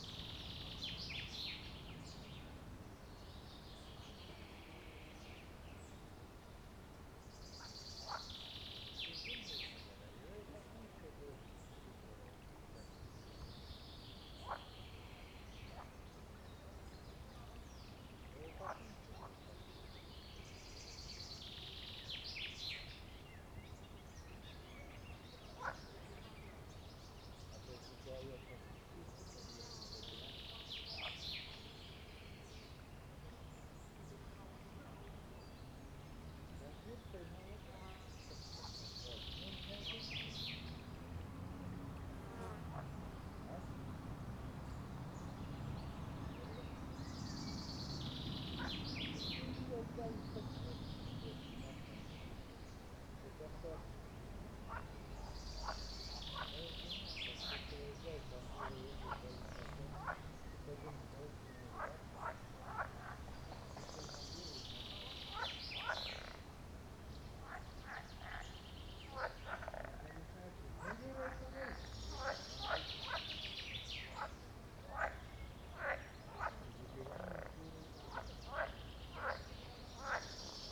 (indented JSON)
{
  "title": "Alanta, Lithuania, manor's park soundscape",
  "date": "2012-06-13 14:35:00",
  "description": "soundscape of Alanta's manor park: frogs, birds, people....",
  "latitude": "55.35",
  "longitude": "25.32",
  "altitude": "121",
  "timezone": "Europe/Vilnius"
}